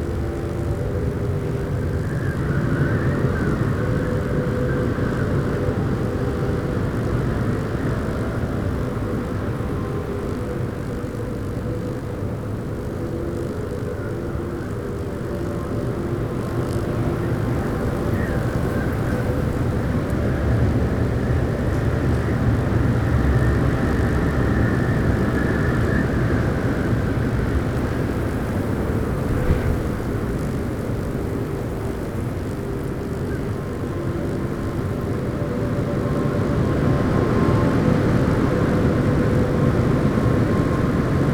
Mimet, France - sous le pylone
7 May 2017, ~09:00